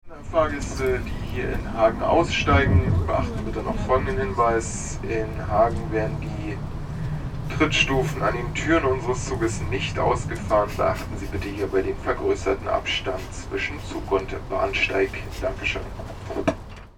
hagen, die unterste Trittstufe - trittstufe 3
28.12.2008 19:23 ICE Berlin -> Köln
Hauptbahnhof, Deutschland, 28 December 2008, 19:23